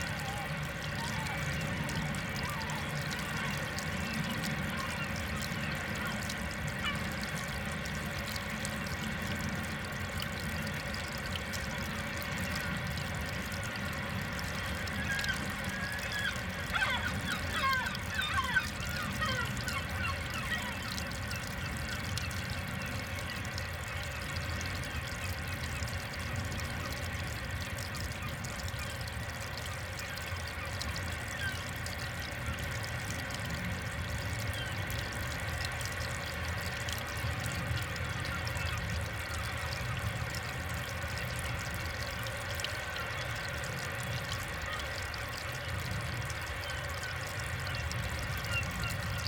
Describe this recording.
The recording consists of the layering of two soundscapes “In Situ”. The sound of the source of the Douro river, and a recording of the mouth of the river, between the cities of Porto and Vila Nova de Gaia, diffused on location through a pair of portable speakers. I then recorded both soundscapes using two Oktava mk 012 microphones into a Sound Devices Mix pre 3.